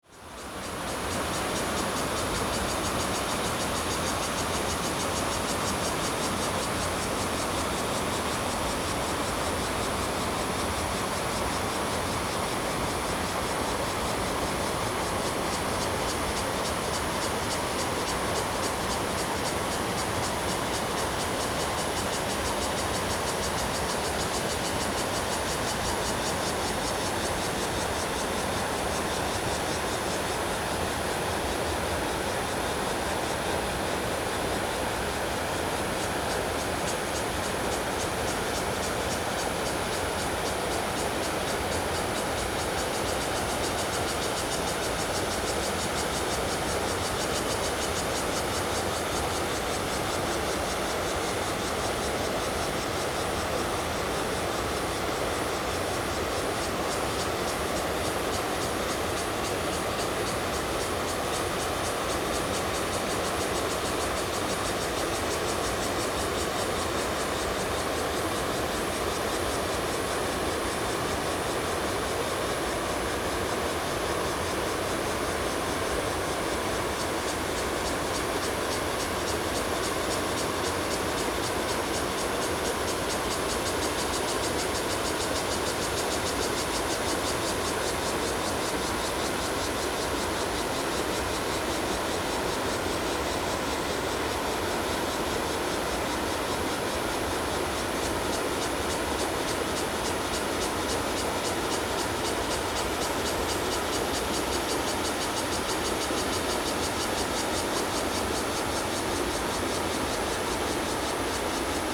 river, on the Bridge, Facing downstream, Cicada sounds
Zoom H2n MS+ XY+Spatial audio